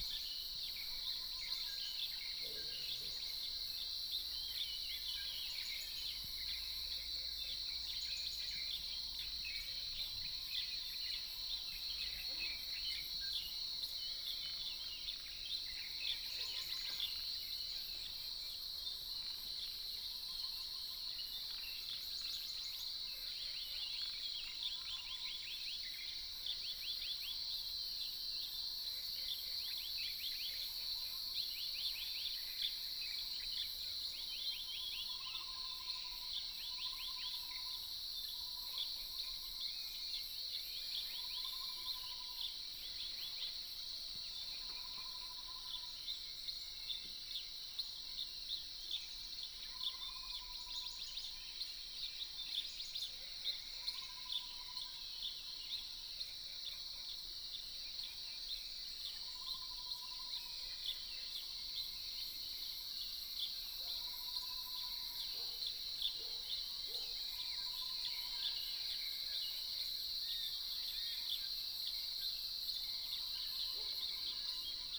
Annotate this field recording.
Early morning, Bird calls, Croak sounds, Insects sounds